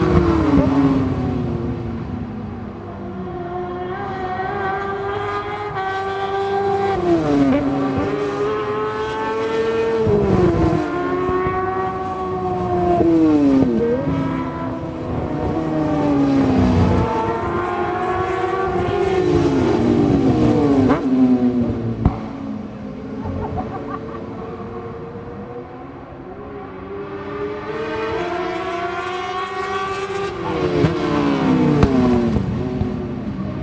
West Kingsdown, UK - World Superbikes 2002 ... Sidecar Qual ...
World Superbikes ... Sidecar Qual ... one point stereo to minidisk ... date correct ... time possibly not ...
Longfield, UK